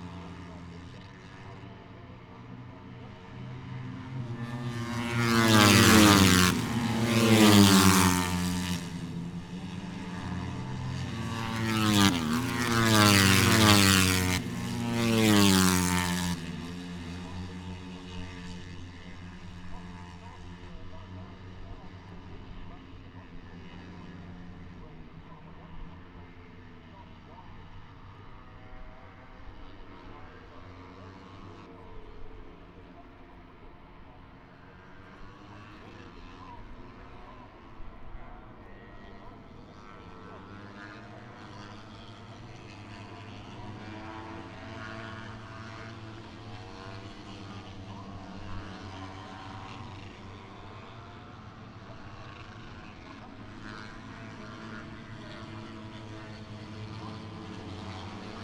Silverstone, UK - british motorcycle grand prix 2016 ... moto three ...
moto three free practice two ... Maggotts ... Silverstone ... open lavaliers on T bar strapped to a sandwich box on a collapsible chair ... windy grey afternoon ...
Towcester, UK